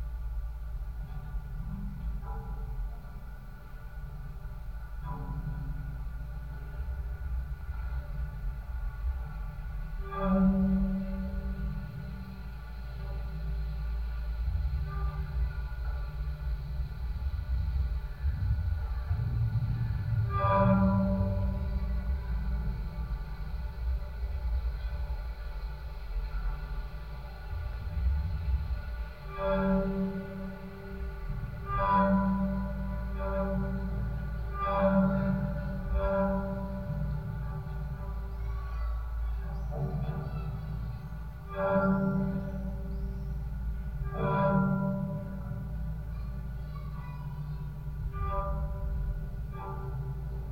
Kaliningrad, Russia, singing railings
contact microphones on the railings
Kaliningrad, Kaliningradskaya oblast, Russia, 8 June 2019